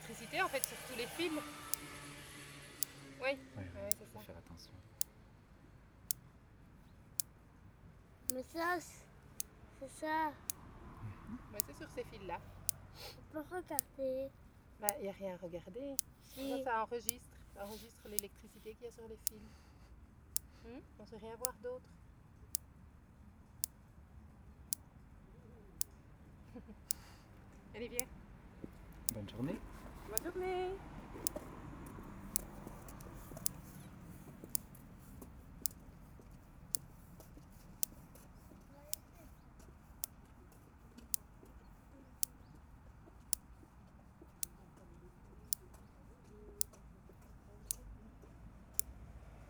Electrical fence near a pedestrian way. A young child is astonished of my recording.
Ottignies-Louvain-la-Neuve, Belgium, 11 March 2016, ~20:00